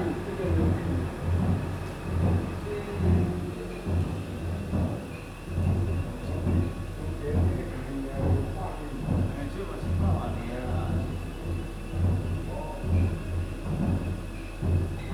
福同宮, 桃米里Puli Township - In the temple square
In the temple square
Zoom H2n MS+XY